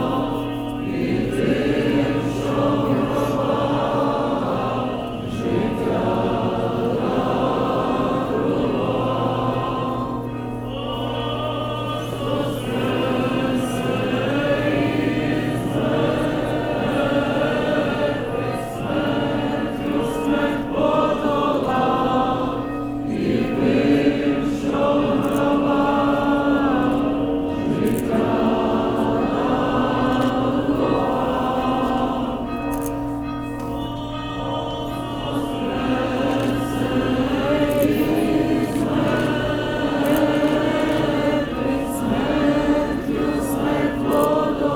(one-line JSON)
{"title": "Pidvalna St, Lviv, Lvivska oblast, Ukraine - Easter procession in Ľviv, Ukraine", "date": "2015-04-11 23:05:00", "description": "Easter procession around the old town of Ľviv, the former Polish city of Lwów, known elsewhere as Lemberg, in today’s northwestern Ukraine.", "latitude": "49.84", "longitude": "24.03", "altitude": "295", "timezone": "GMT+1"}